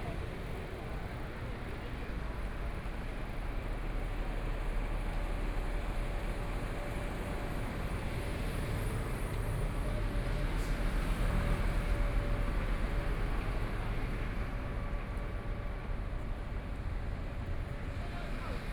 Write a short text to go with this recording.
Walking on the road （ZhongShan N.Rd.）from Nong'an St. to Jinzhou St., Traffic Sound, Binaural recordings, Zoom H4n + Soundman OKM II